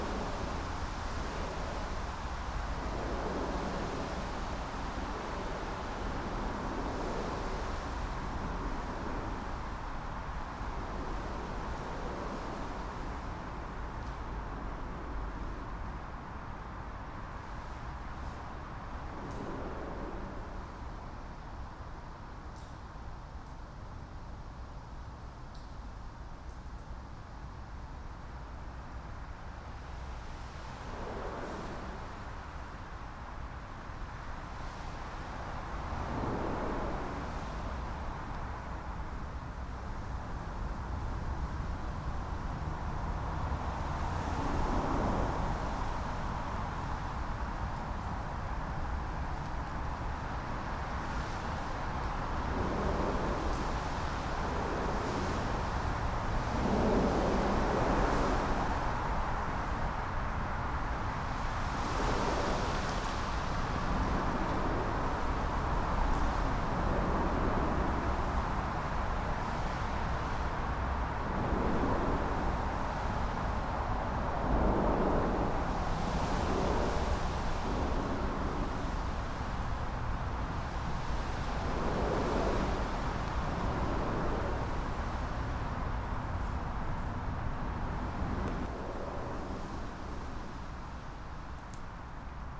Newcastle upon Tyne, UK - Underpass from Exhibition Park below A167
Recording made in underpass from Exhibition Park below A167. Sound of cars traveling along road above. Rainy August night around 10pm.
10 August 2016, 22:00